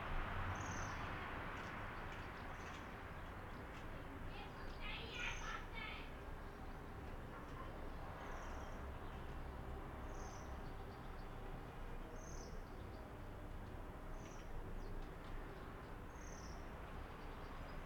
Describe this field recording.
Field recording, soundscape, 8th floor of building. rec. setup: M/S matrix-AKG mics in Zeppelin>Sound Devices mixer. 88200KHz